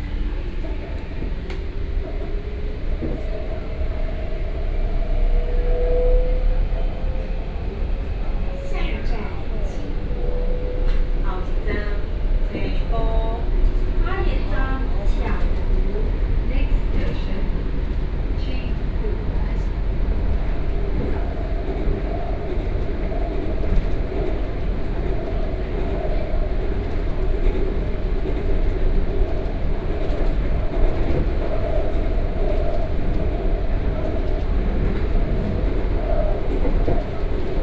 from Metropolitan Park station to Ciaotou Sugar Refinery station
Kaohsiung City, Taiwan, 16 May